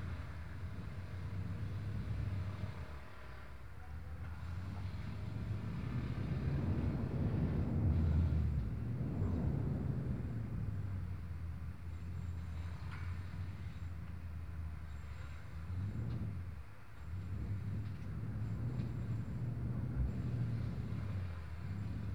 wind forcing its way into the apartment through a narrow slit in the window frame, creating mumbling and rumbling growls. construction works on the outside.
Poznan, Poland, September 2013